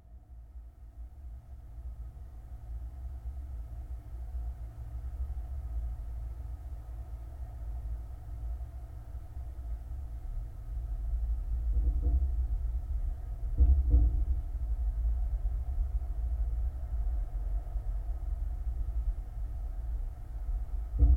Utena, Lithuania, abandoned hangar ventilation
some abandones hangar. contact mics on metallic ventilation window
17 July 2018